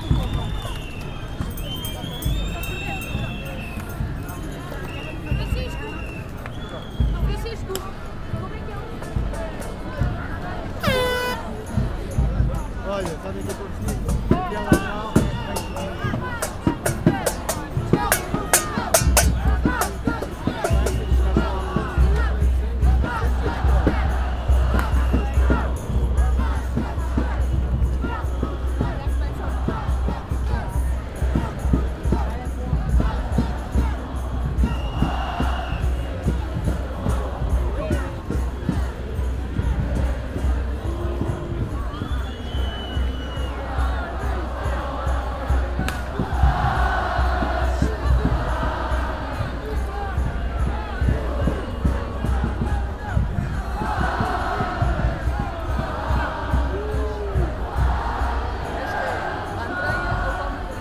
Av. Liberdade, Lisbon, manif rasca
Manifestation against the Portuguese politicians class, the government, protest, music, anger, joy, people yelling, drums, horns